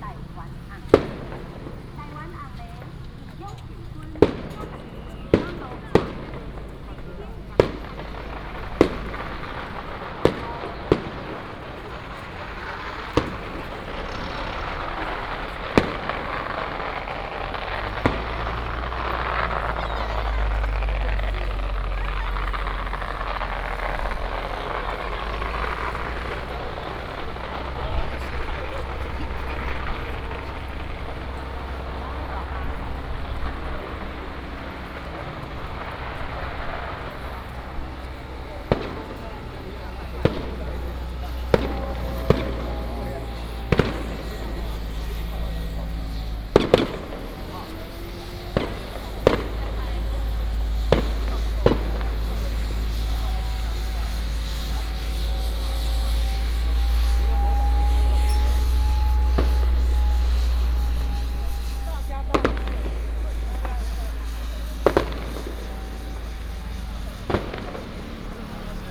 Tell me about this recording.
Firecrackers and fireworks, Traffic sound, Baishatun Matsu Pilgrimage Procession